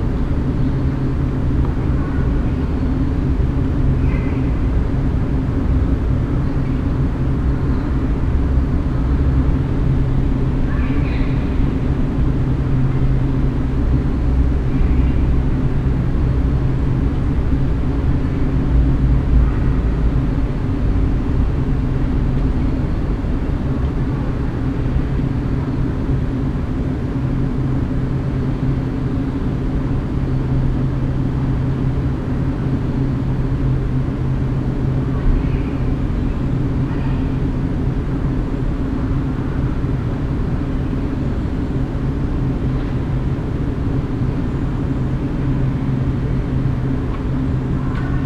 velbert, corbystrasse, lüftung der einkaufspassage
stereo okm aufnahme morgens, lüftung und taubenflug
soundmap nrw: social ambiences/ listen to the people - in & outdoor nearfield recording